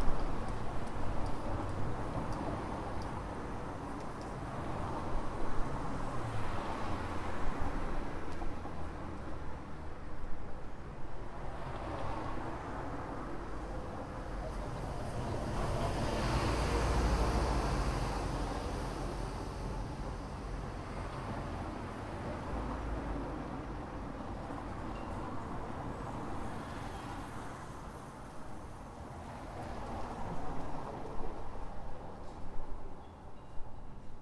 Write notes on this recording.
This was recorded in front of a small building which exist a restaurant at the first floor and an English school at the second one. It was recorded by a Tascam DR-05 placed on the floor of a busy avenue.